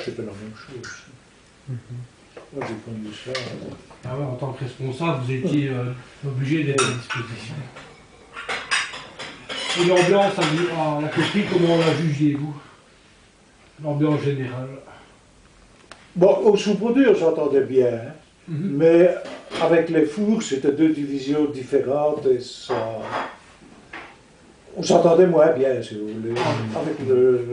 Anderlues, Belgique - The coke plant - Alain Debrichy
Alain Debrichy
An old worker testimony on the old furnaces of the Anderlues coke plant. We asked the workers to come back to this devastated factory, and they gave us their remembrances about the hard work in this place.
Recorded at his home, because he was extremely tired. I placed his testimony exacly where was his work place.
Recorded with Patrice Nizet, Geoffrey Ferroni, Nicau Elias, Carlo Di Calogero, Gilles Durvaux, Cedric De Keyser.